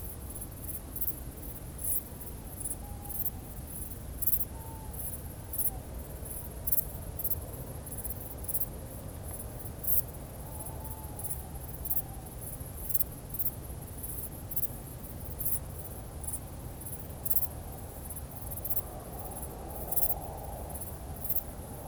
Hautot-sur-Seine, France - Criquets by night
By night, a very soft ambience with criquets and owl on the Seine river bank.